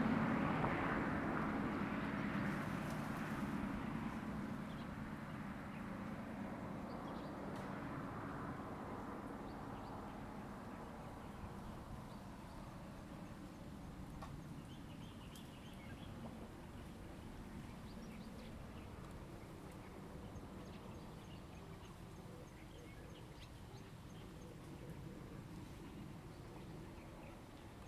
Wande Rd., Manzhou Township - Agricultural areas in mountain villages
Various bird calls, Agricultural areas in mountain villages, traffic sound
Zoom H2n MS+XY
2 April, ~15:00